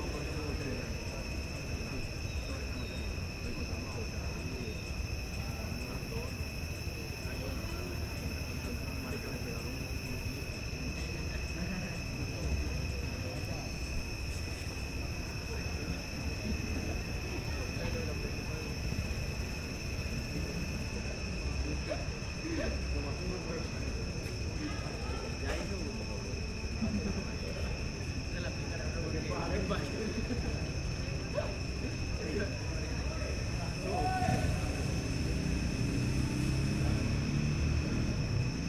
Cl., Medellín, Belén, Medellín, Antioquia, Colombia - Parque Los Alpes
Toma de audio / Paisaje sonoro del parque Los Alpes grabada con la grabadora Zoom H6 y el micrófono XY a 120° de apertura en horas de la noche. Se pueden escuchar algunas personas hablando, los sonidos de la naturaleza de manera tenue, la música de un parlante que se encontraba a unos metros del punto de grabación y el silbido de una persona llamando a su perro en algunas ocasiones.
Sonido tónico: Naturaleza y personas hablando
Señal sonora: Silbido
5 September 2022